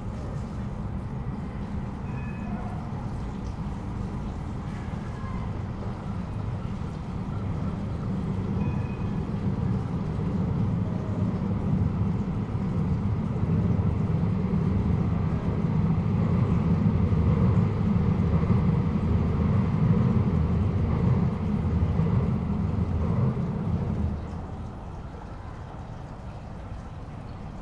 Porto, Rua da Senhora das Verdades - woman cleaning stairs
Oporto, Portugal, 13 October 2010